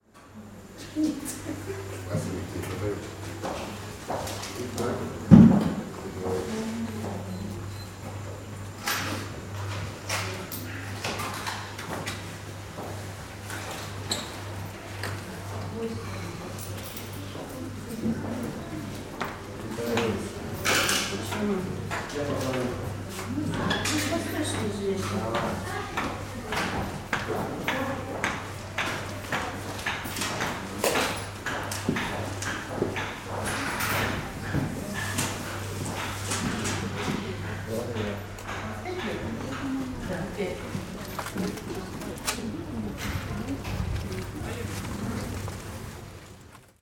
Baltijaam fish market sounds, Tallinn
sounds of the Baltijaam fish market